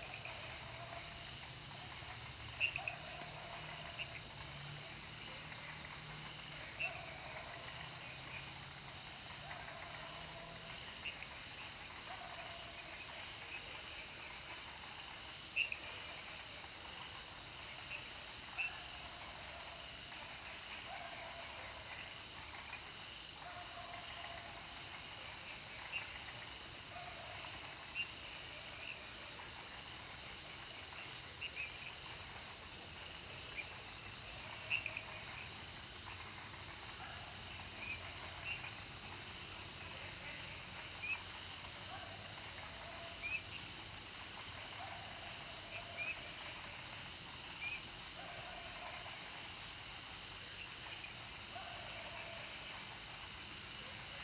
中路坑, 埔里鎮桃米里 - Sound of insects and Frogs
Sound of insects, Frogs chirping, Faced woods
Zoom H2n MS+XY